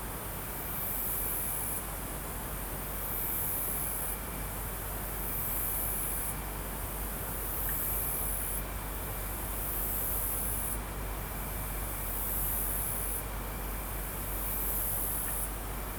Saint-Pons-de-Thomières, France - Insects Around a Gîte
Recorded on a Sound Devices MixPre-3 via an Audio-Technica BP4025